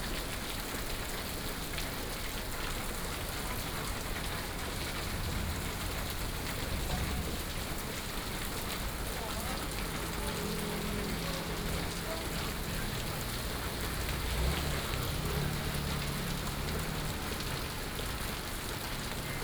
Sec., Xinyi Rd., Da’an Dist., Taipei City - Walking in the rain
Walking in the rain, Thunderstorm, Traffic Sound